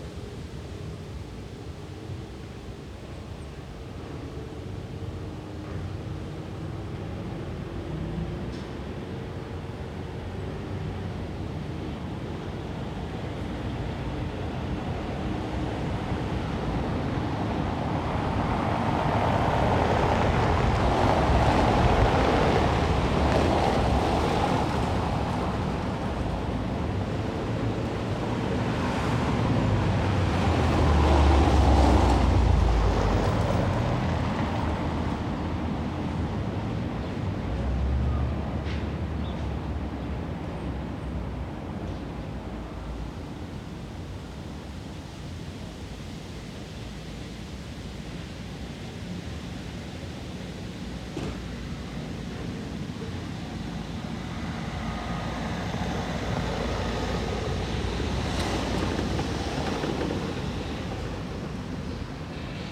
Koloniestraße, Berlin - passers-by, scrapyard, distant mosque. Besides the clanking noises from the scrapyard you can also hear some vague murmurs and even singing from inside the Shiite Imam-Sadık-mosque over the street, if you listen carefully enough.
[I used the Hi-MD-recorder Sony MZ-NH900 with external microphone Beyerdynamic MCE 82]
Koloniestraße, Berlin - Passanten, Schrottplatz, Moschee in einiger Entfernung. Wenn man genau hinhört, kann man außer dem metallischen Scheppern vom Schrottplatz undeutlich auch die Sprechchöre und Gesänge aus der schiitischen Imam-Sadık-Moschee auf der anderen Straßenseite hören.
[Aufgenommen mit Hi-MD-recorder Sony MZ-NH900 und externem Mikrophon Beyerdynamic MCE 82]